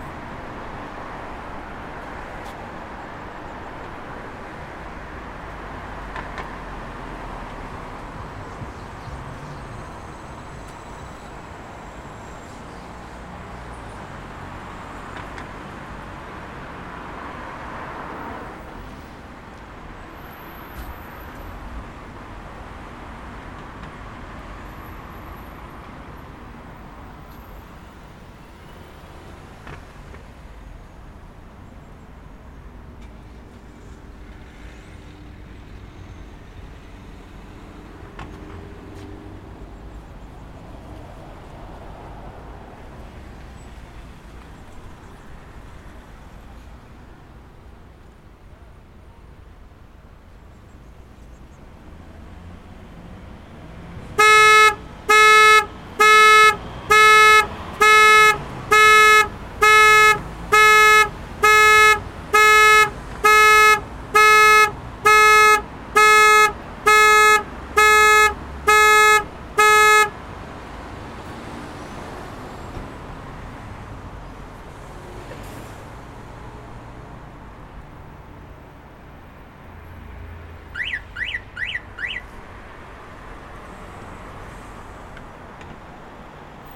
Geležinkelio stotis, Kaunas, Lithuania - City atmosphere interrupted by a car alarm
An older man was sitting alone in a parked car, apparently locked-in by his relatives. While waiting for them to return from wherever they've gone to, he occasionally set of a car alarm, probably by slight movements. Careful, the alarm sound is much louder than the surrounding atmosphere! Recorded with ZOOM H5.
Kauno apskritis, Lietuva, 13 May 2021